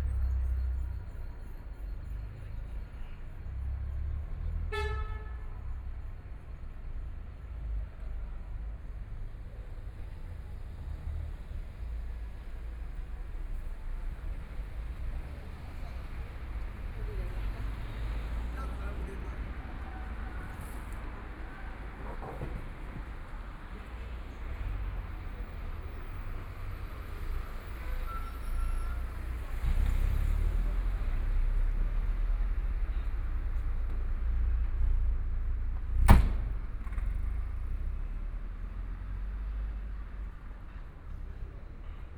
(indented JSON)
{
  "title": "East Beijing Road, Shanghai - The night streets sound",
  "date": "2013-11-30 20:18:00",
  "description": "Walking on the road, Binaural recording, Zoom H6+ Soundman OKM II",
  "latitude": "31.24",
  "longitude": "121.48",
  "altitude": "25",
  "timezone": "Asia/Shanghai"
}